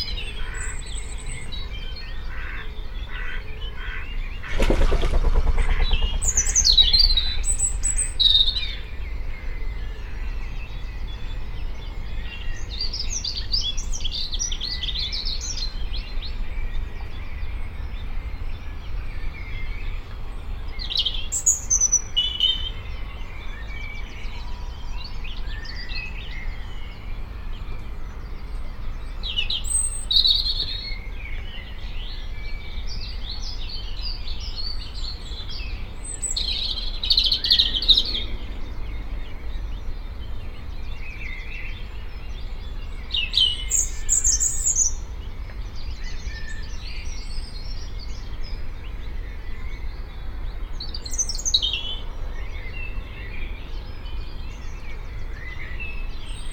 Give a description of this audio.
This is a memorial to the men of BAB21 who lost their lives here in 1944 from bombing by the American Airforce. The men were all prisoners of war in this work camp and must have dreamed of England and sounds like these.